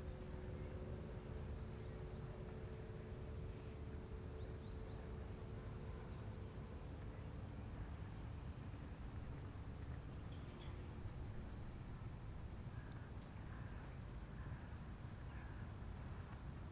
Söbrigener Str., Dresden, Deutschland - Comoranes on Dresden Elbe Island
Comoranes on Dresden's Elbe island, paddle wheel steamers, paddle boats and motor boats pass by. Small motorized airplanes fly by and horses neigh at a riding tournament. Crows and other birds can be heard. Recorded with a Zoom H3 recorder.